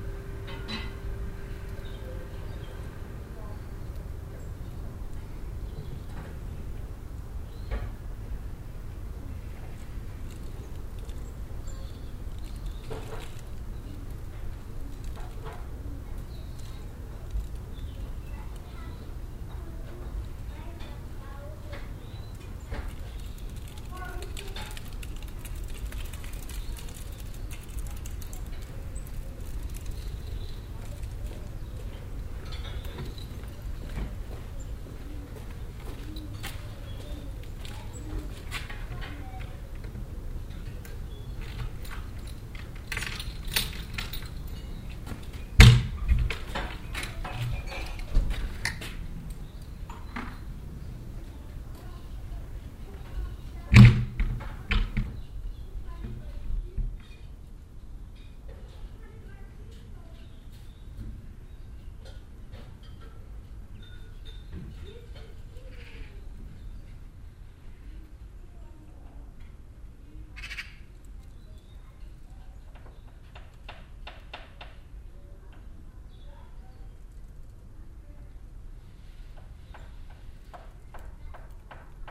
wülfrath, kirchplatz, atmo in kleiner gasse - wuelfrath, kirchplatz, atmo in kleiner gasse
windspiel mit blättern, schritte und leise stimmen hinter fenstern, eine alte tür
project: social ambiences/ listen to the people - in & outdoor nearfield recordings